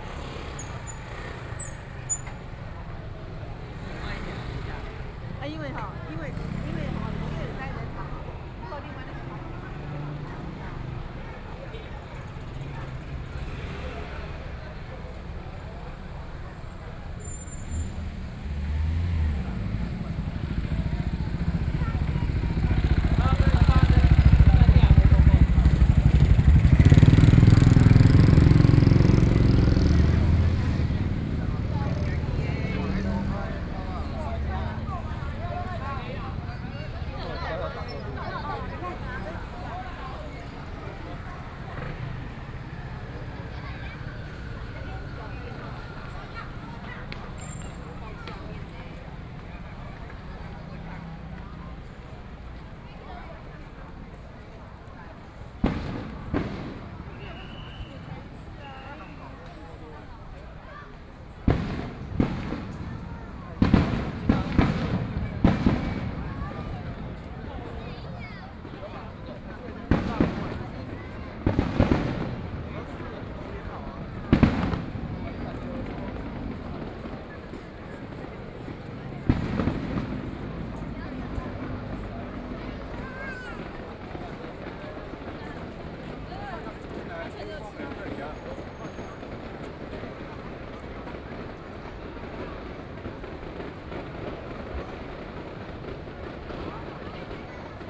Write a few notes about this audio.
Very many people in the park, Distance came the sound of fireworks, Traffic Sound, Please turn up the volume a little. Binaural recordings, Sony PCM D100+ Soundman OKM II